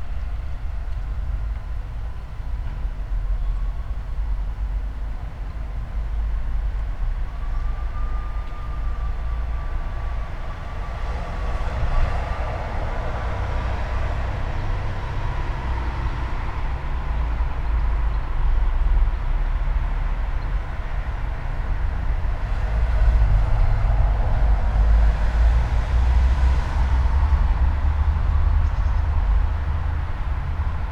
all the mornings of the ... - aug 17 2013 saturday 09:20
Maribor, Slovenia, August 2013